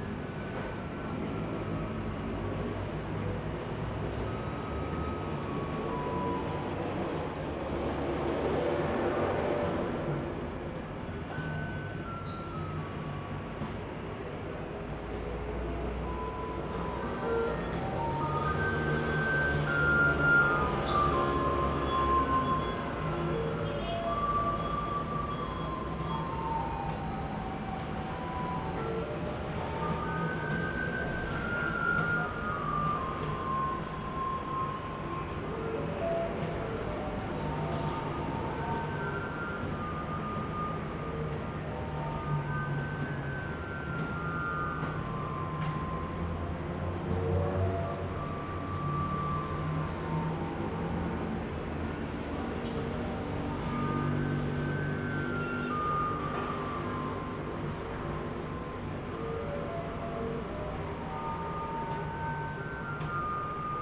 Rende 2nd Rd., Bade Dist. - Clear trash time
Clear trash time, Garbage truck arrived, traffic sound, Zoom H2n MS+XY+ Spatial audio
Bade District, Taoyuan City, Taiwan, 28 November 2017